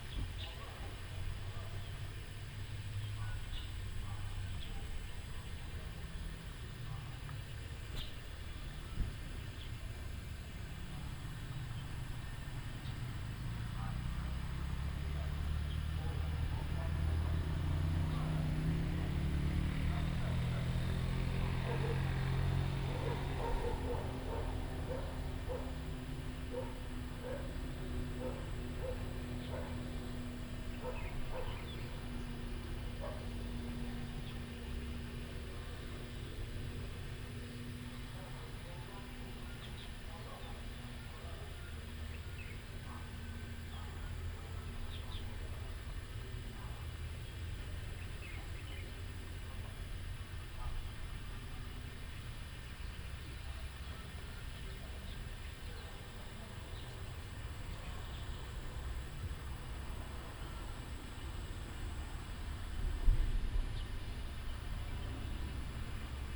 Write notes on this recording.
Cicadas sound, Dogs barking, Ecological pool, A small village in the evening